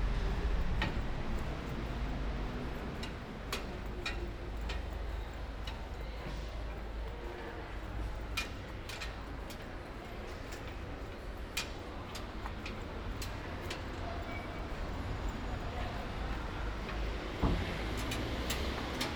Ascolto il tuo cuore, città. I listen to your heart, city. Several Chapters **SCROLL DOWN FOR ALL RECORDINGS - “La flânerie après quatre mois aux temps du COVID19”: Soundwalk
“La flânerie après quatre mois aux temps du COVID19”: Soundwalk
Chapter CXVI of Ascolto il tuo cuore, città. I listen to your heart, city
Friday, July 10th, 2020. Walking in the movida district of San Salvario, Turin; four months after the first soundwalk during the night of closure by the law of all the public places (at 6 p.m.: March, 10th) due to the epidemic of COVID19.
Start at 10:21 p.m., end at h. 10:59 p.m. duration of recording 38’19''
As binaural recording is suggested headphones listening.
The entire path is associated with a synchronized GPS track recorded in the (kml, gpx, kmz) files downloadable here:
Go to Chapter I, March 10th start at 7:31 p.m., end at h. 8:13 p.m. duration of recording 40'45''. Different hour but same sun-time as on March 10th sunset was at 6:27 p.m., today, July 10th is at 9:17 p.m.